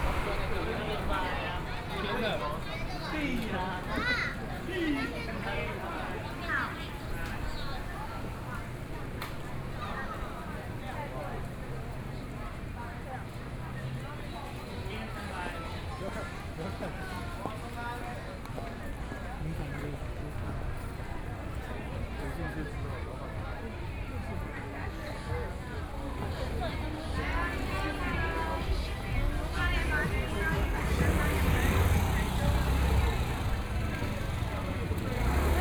Gongming St., Tamsui Dist. - walking in the Street
Rain inundated the streets, Walking in the night market, Binaural recordings, Sony PCM D50 + Soundman OKM II
New Taipei City, Taiwan, 2 November, 20:58